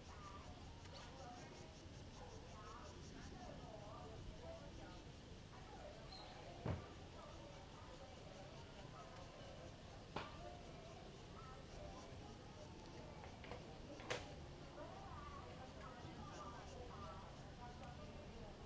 金崙林道, Jinfong Township - Small village
In the street, Small village, Traffic Sound
Zoom H2n MS +XY
5 September 2014